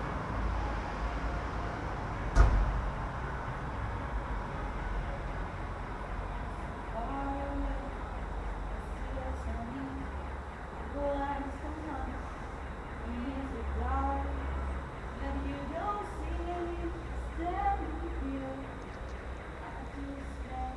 Calle San Pedro de Cardeña, Burgos, Spain - 2020-03-20 Soundwalk: Woman Singing on Balcony, Burgos, Spain
A woman singing on a balcony. The confinement regulations meant that I had to limit my soundwalks to the limited, weekly outings for groceries. Still, on my very first "shopping soundwalk", I came across this woman singing (practicing?) on her balcony, oblivious to the world.